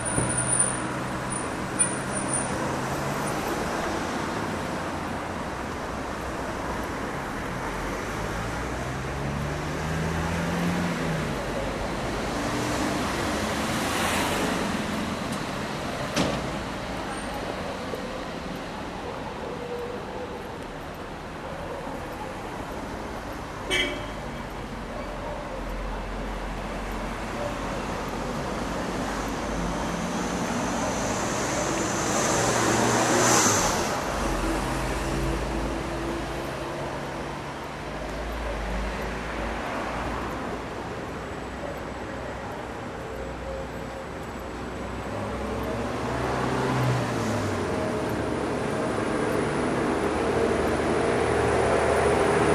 Fullmoon Nachtspaziergang Part IV
Fullmoon on Istanbul, walking uphill to Şişli passıng the mysterious empty blue skyskrapers, shadowing a bit of silence into the street.